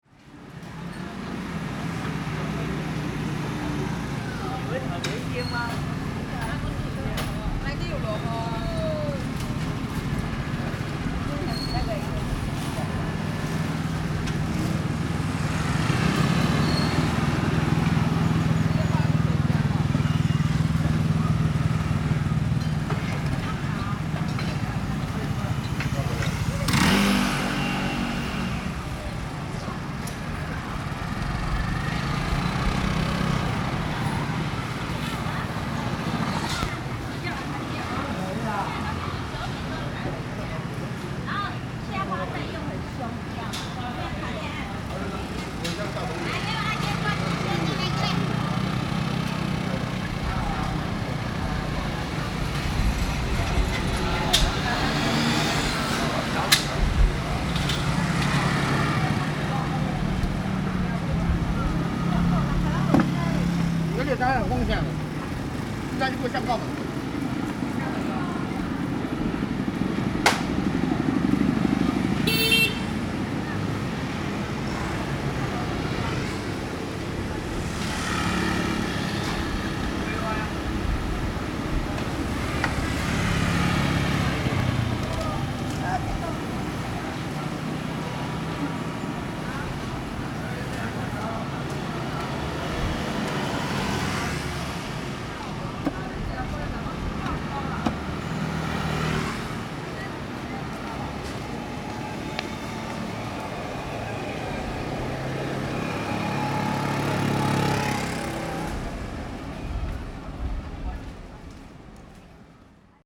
Sec., Jiangning Rd., Banqiao Dist., New Taipei City - Walking through the traditional market
Walking through the traditional market
Rode NT4+Zoom H4n